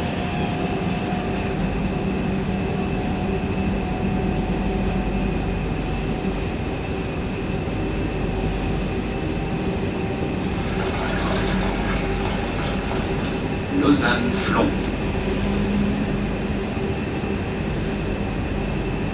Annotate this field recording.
MetroM2_inside_from_CHUV_to_Flon, SCHOEPS MSTC 64 U, Sonosax préamp, Edirol R09, by Jean-Philippe Zwahlen